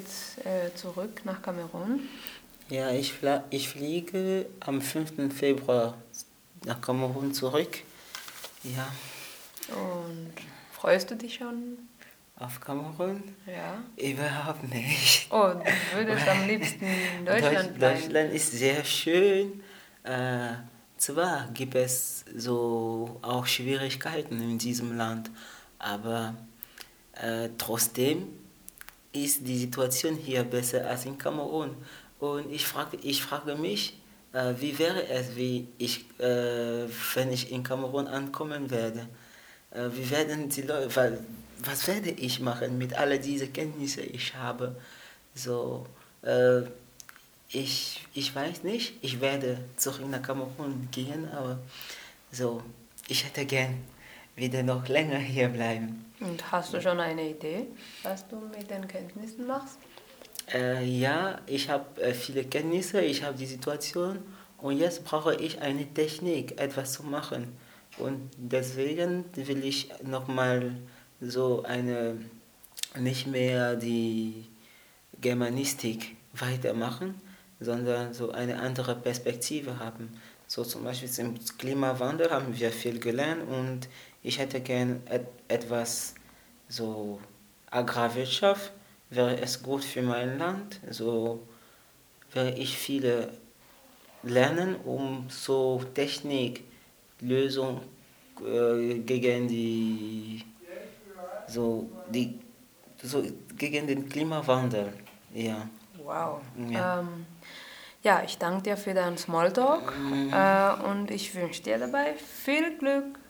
FUgE, Hamm, Germany - Marie-Claire interviews Bristol...
Marie Claire NIYOYITA, from “Zugvögel” Rwanda, interviews Bristol TEDJIODA, from Friedahouse International Cameroon during a workshop with radio continental drift. Both of them belong to the first group of young volunteers from the Global South hosted in Germany as guests of local NGOs. The “Reverse” Programme was initiated by Engagement Global together with a network of local sister-organisations, an effort to bring the “North-South-Dialogue” to local people and their organisations on the ground.
The complete playlists is archived here: